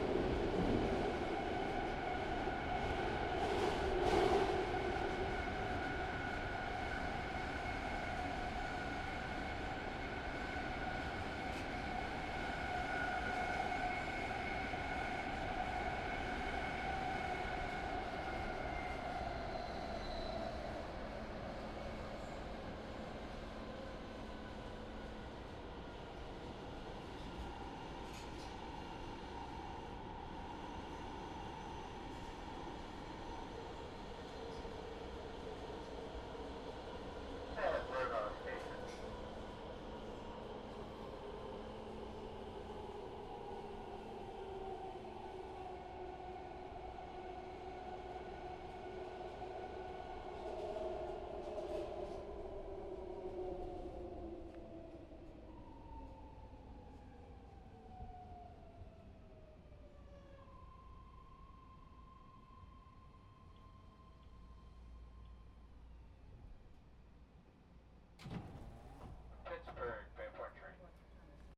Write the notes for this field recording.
Delirious from an early morning flight, heading to the Ashby stop from the San Francisco airport.